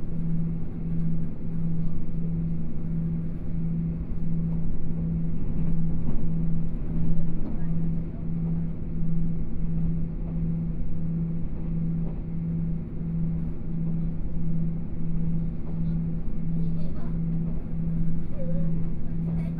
Luye Township, Taitung County - Tze-Chiang Limited Express
from Ruiyuan Station to Luye Station, the sound of message broadcasting, Train noise, Binaural recordings, Zoom H4n+ Soundman OKM II